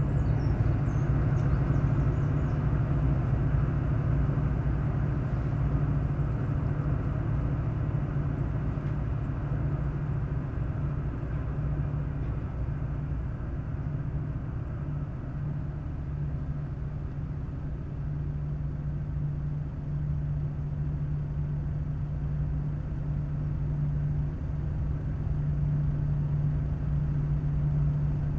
Sahurs, France - La Bouille - Sahurs ferry
We are crossing the Seine river, using the Ferry from Sahurs and going to La Bouille.
19 September 2016, 07:10